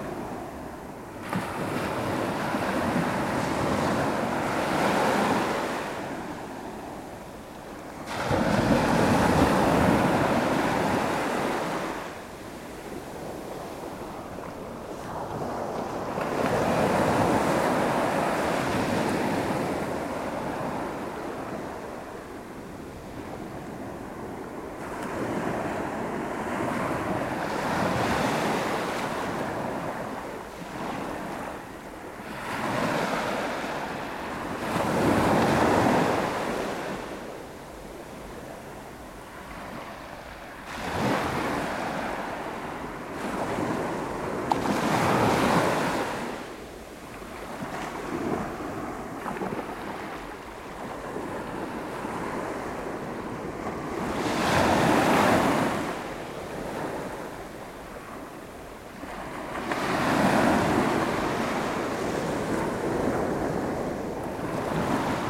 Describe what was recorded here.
Sounds of the waves on the Frontignan beach. Recording made walking through the beach during 500 meters.